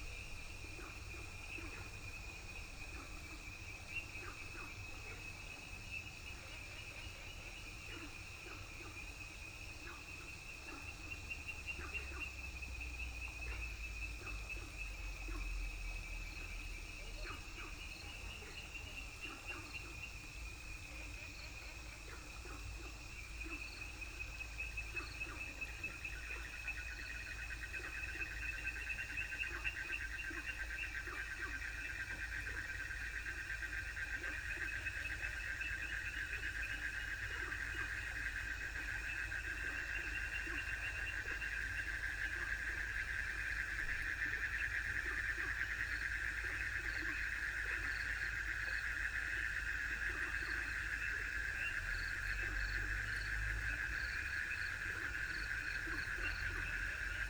南投縣, 埔里鎮桃米巷 - Flow and Frog sounds
Flow and Frog sounds
Puli Township, 桃米巷16號, 17 May 2016, 20:50